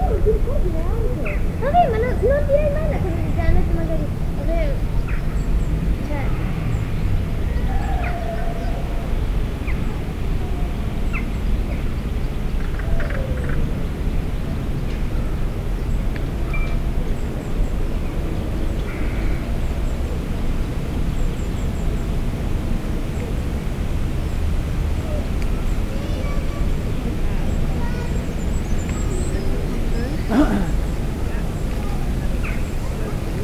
Priory Park, Malvern, Worcestershire, UK - Priory Park
Experimental ambient of a public park. By laying the microphones on the ground under the bench I was using sound from quite a distance seems to have been picked up. Recorded with a Sound devices Mix Pre 3 and 2 Beyer lavaliers.
3 July 2019, ~13:00